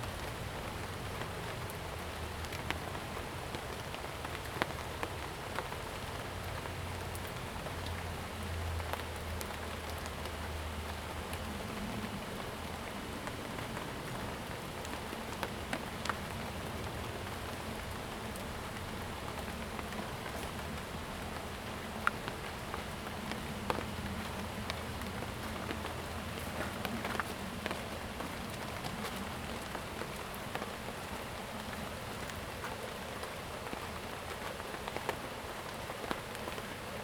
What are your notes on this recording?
In the park, Frogs chirping, Rainy Day, Zoom H2n MS+XY